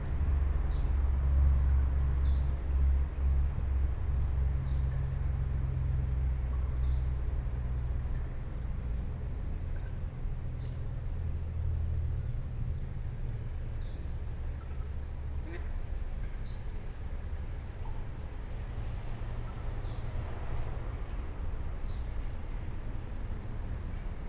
Kupiškis, Lithuania, under the bridge
Standing under the bridge
Kupiškio rajono savivaldybė, Panevėžio apskritis, Lietuva, September 2022